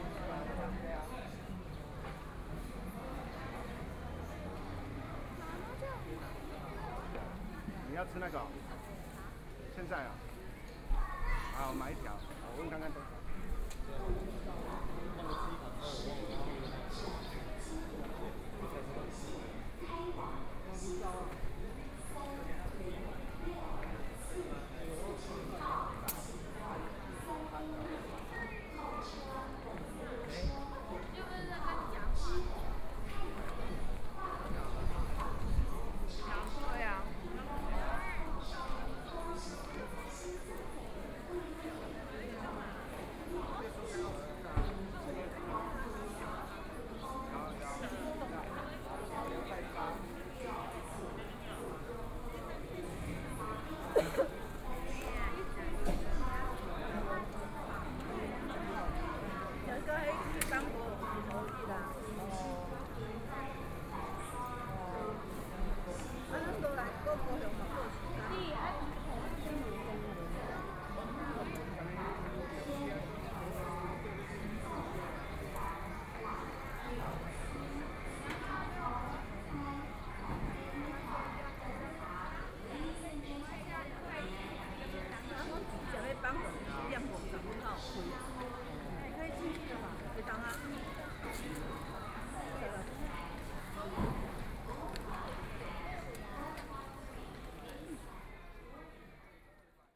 Taitung Station, Taiwan - Station hall
Travelers to and from the Station hall, Messages broadcast station, Binaural recordings, Zoom H4n+ Soundman OKM II
Taitung County, Taiwan, 18 January 2014